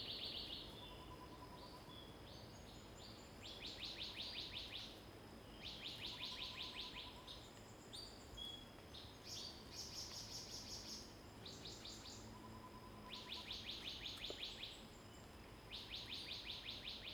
水上, 桃米里, Puli Township - in the woods
Birds singing, in the woods
Zoom H2n MS+ XY